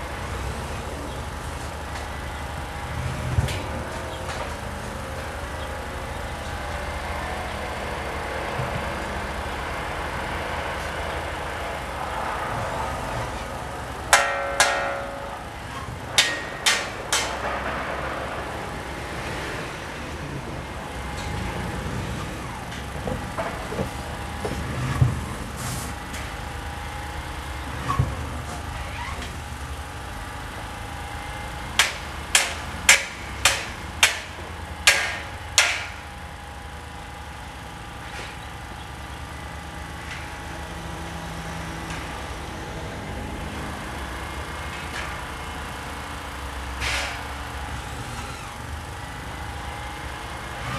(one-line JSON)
{"title": "Da'an District, Taipei - Construction", "date": "2012-02-06 11:19:00", "description": "Construction, Sony ECM-MS907, Sony Hi-MD MZ-RH1", "latitude": "25.01", "longitude": "121.55", "altitude": "14", "timezone": "Asia/Taipei"}